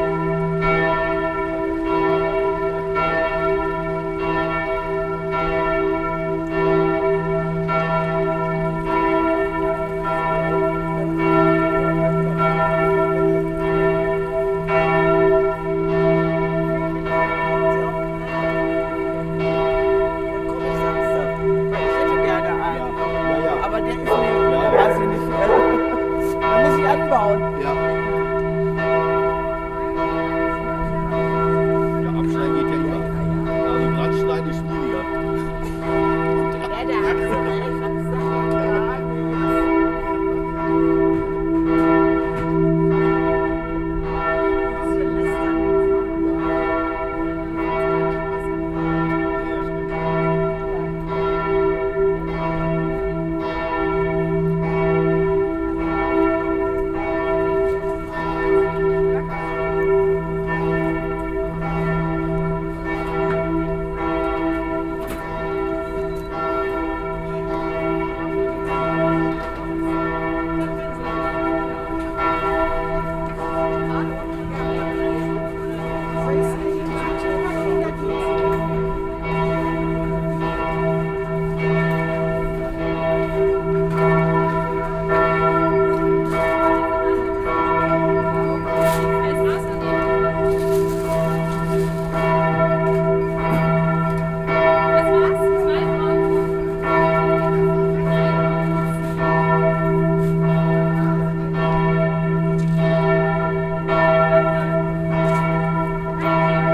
walking towards the main church doors, pushing them open to enter, lingering a little inside listening and returning in to the buzz outside; people have gathered around the fountain, in required safety distance, noon bells...
2 April 2020, ~12:00